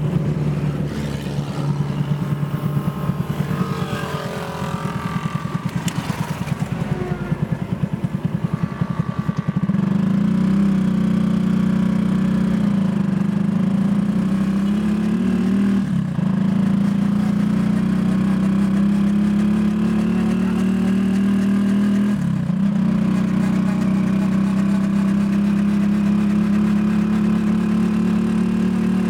Morona, Iquitos, Peru - old and tired moped taxi
old and tired moped taxi